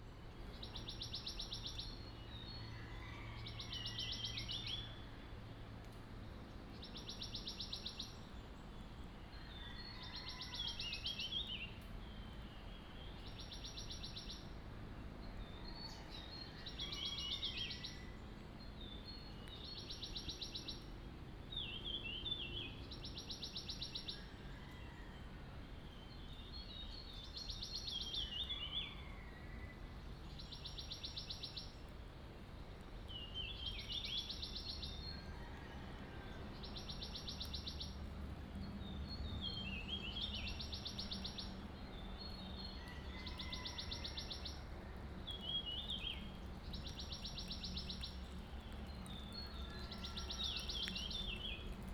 Shuishang Ln., Puli Township - Bird sounds
Bird sounds, Crowing sounds, Morning road in the mountains
19 April 2016, 05:16